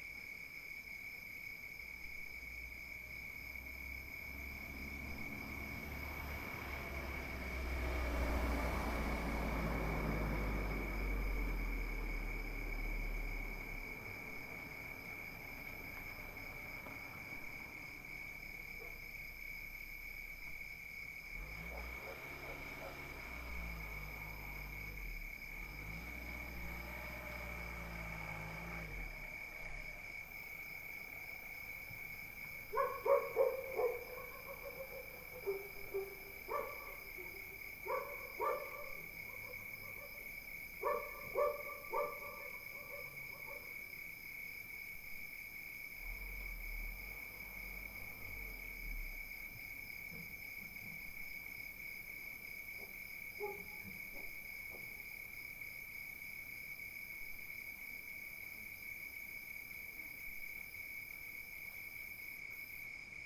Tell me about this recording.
Chaude nuit d'été, les chiens se sont tenus à peu près tranquille c'est pas souvent que ça arrive. Évité de mettre le micro trop près de l'herbe, mais sur le balcon de la maison, car sinon les sauterelle conocéphales saturent l'enregistrement. Ce mois de janvier est particulièrement chaud. Il fait 17° à 1400m et 20° à 1100m (la nuit), fichier de 40mn (1h ne passe pas) recadré avec audacity 320 kb/s, Prise de son ZoomH4N niveau 92